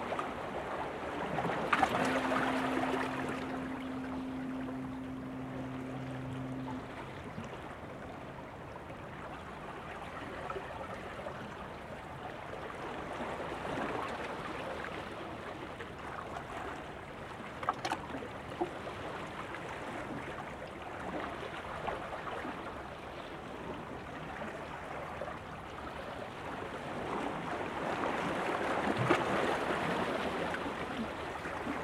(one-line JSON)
{"title": "Dover-Folkestone Heritage Coast, Capel le Ferne, Kent, UK - Sea swirling through rocks", "date": "2015-04-09 13:26:00", "description": "This recording was taken from a rock wave-breaker which you can just about see under the surface of the water. The mics were level with the surface of the rocks, so the sounds of the sea are about as loud as the sounds from within the wave-breaker of the water splashing and bubbling.\nAgain, you can hear the fog horn somewhere at sea in roughly 1 minute intervals.", "latitude": "51.10", "longitude": "1.25", "altitude": "3", "timezone": "Europe/London"}